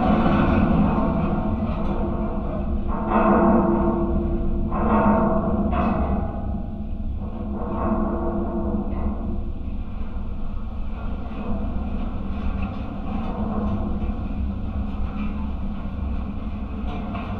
Utena, Lithuania, railway light tower
contact microphones on abandones railway light tower